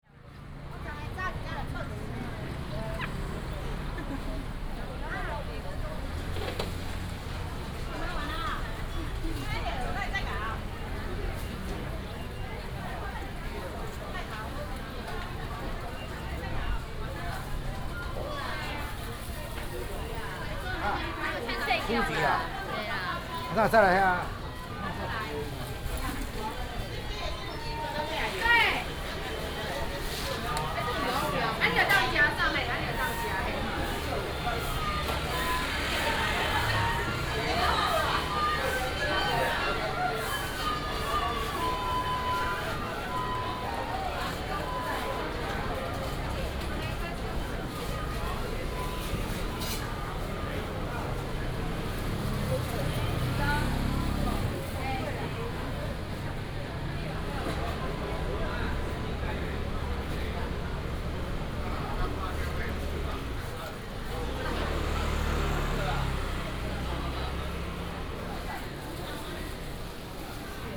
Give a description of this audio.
Walking in the traditional market, lunar New Year, traffic sound, vendors peddling, Binaural recordings, Sony PCM D100+ Soundman OKM II